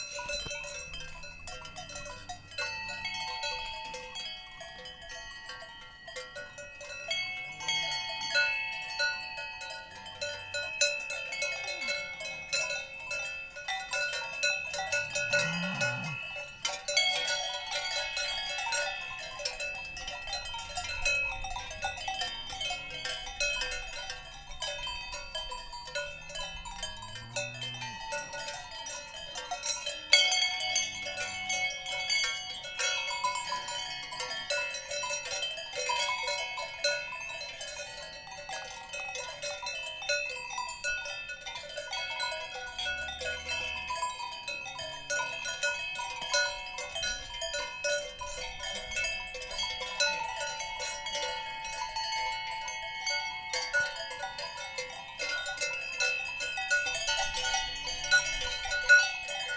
Valdidentro SO, Italia - cow bells